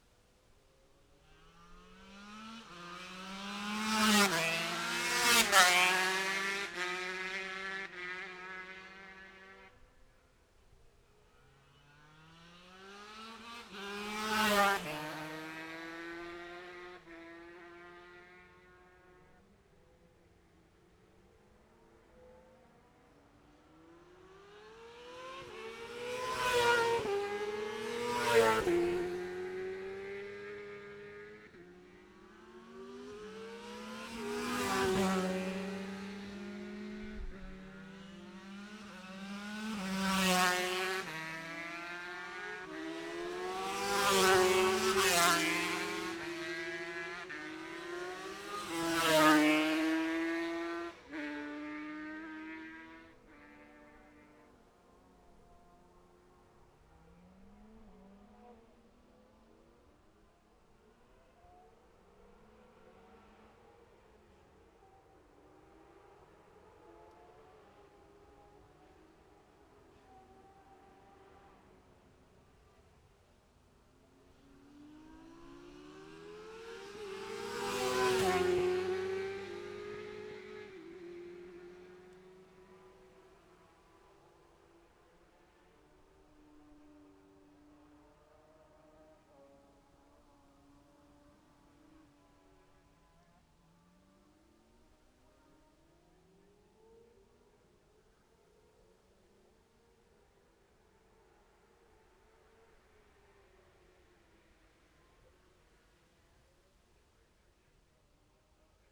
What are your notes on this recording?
Gold Cup 2020 ... 2 & 4 strokes ... Memorial Out ... dpa 4060s to Zoom H5 clipped to bag ...